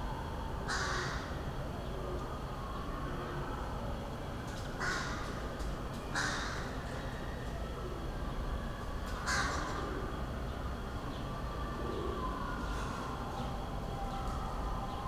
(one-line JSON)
{"title": "from/behind window, Mladinska, Maribor, Slovenia - crows", "date": "2014-08-05 10:57:00", "latitude": "46.56", "longitude": "15.65", "altitude": "285", "timezone": "Europe/Ljubljana"}